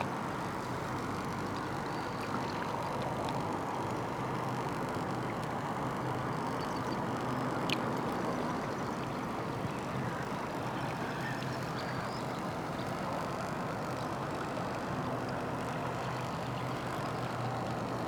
dvorjane, drava river - river flow, power line, birds
25 February 2014, 16:49